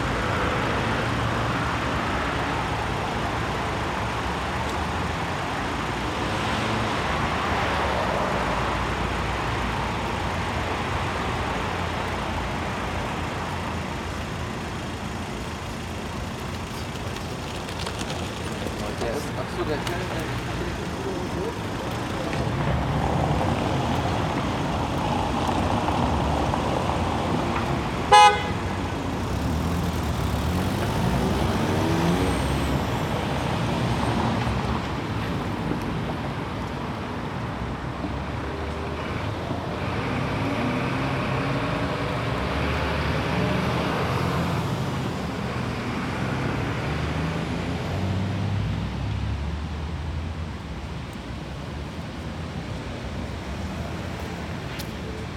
Osloer Straße, Soldiner Kiez, Wedding, Berlin, Deutschland - Osloer Straße at the corner of Grüntaler Straße - Intersection with heavy traffic
Osloer Straße at the corner of Grüntaler Straße - Intersection with heavy traffic. Osloer Straße has four lanes plus two tramway lanes in the middle.
[I used the Hi-MD-recorder Sony MZ-NH900 with external microphone Beyerdynamic MCE 82]
Osloer Ecke Grüntaler Straße - Vielbefahrene Kreuzung. Die Osloer Straße hat vier Fahrspuren zuzüglich zweier Tramgleise in der Mitte.
[Aufgenommen mit Hi-MD-recorder Sony MZ-NH900 und externem Mikrophon Beyerdynamic MCE 82]